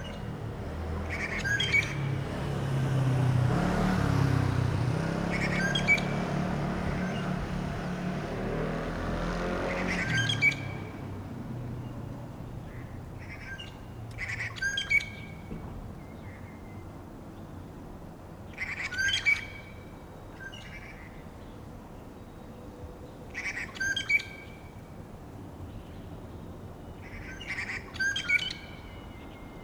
Juguang Rd., Xindian Dist. - Bird calls
Outside prison, Bird calls, Aircraft flying through, Traffic Sound
Zoom H4n + Rode NT4
New Taipei City, Taiwan